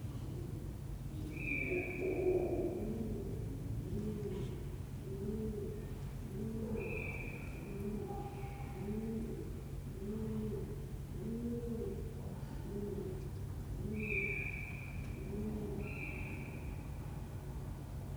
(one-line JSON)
{"title": "Hiddenseer Str., Berlin, Germany - The first (fake) cuckoo of spring - from my 3rd floor window 8 days into Covid-19 restrictions", "date": "2020-03-28 09:52:00", "description": "Imagine my surprise to hear a cuckoo 'cuckoo-ing' in the Hinterhof. Definitely a first, so I rushed to record through the window. The cuckoo-ing was quickly followed by a female cuckoo 'bubbling', then a peregrine falcon, then a blackcap warbling and other species - a very welcome explosion of bio-diversity in under a minute. Was very pleased to find that someone had taken to playing bird song tracks from their own open window - not loud, but pleasantly clear. Quite different from the normal TVs and music. It's a great idea but I don't know who is doing it as yet. This part of Berlin has had none of the coordinated clapping or bell ringing in response to Covid-19 as described by others. But these short, one-off, spontaneous sonic gestures are totally unexpected and very nice. Fingers crossed for more. Perhaps the beginnings of a new sonic art form. Interesting to hear that the real birds (pigeons) just carried on as normal, completely un-worried by the new sonic arrivals.", "latitude": "52.54", "longitude": "13.42", "altitude": "60", "timezone": "Europe/Berlin"}